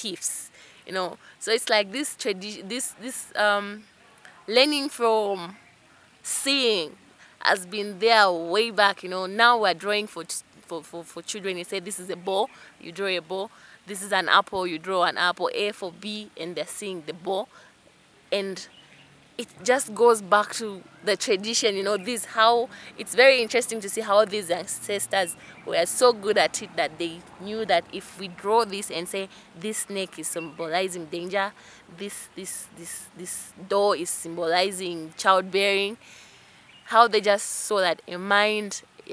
The Garden Club, Lusaka, Zambia - Traditional teachings in multimedia...
Mulenga Mulenga takes us on an audio journey through her artistic research practice across Zambian cultural heritage, and especially the traditional teachings of the Bemba, which are passed down through generations in elaborate “multimedia” forms and events. Here, she describes some of the symbols, sculptures and ritual teachings of the Mbusa ceremony….
20 July